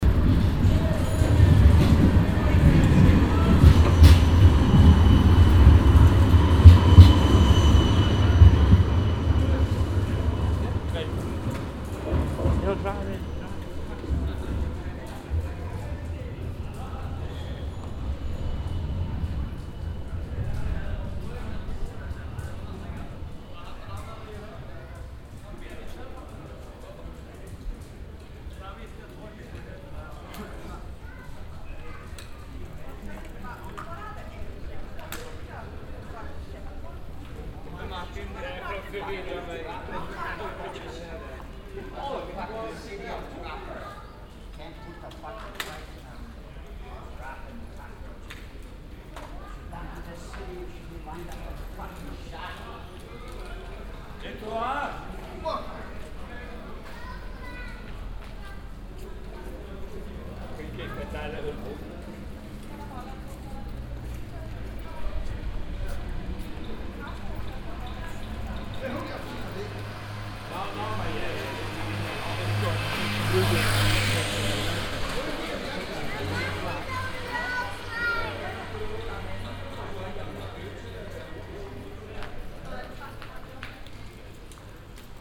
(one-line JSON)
{"title": "amsterdam, leidsestraat, tram electricity wires", "date": "2010-07-06 17:20:00", "description": "the swinging tram electrity wires, trams passing by - tourists biking on rented bicycles\ninternational city scapes - social ambiences and topographic field recordings", "latitude": "52.37", "longitude": "4.89", "altitude": "-1", "timezone": "Europe/Amsterdam"}